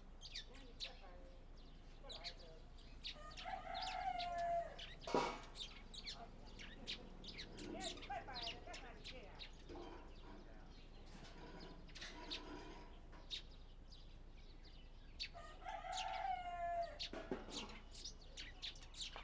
On the second floor, Neighbor's voice, Early in the morning, Chicken sounds, The sound of firecrackers, Motorcycle sound, Zoom H6 M/S
1 February 2014, ~07:00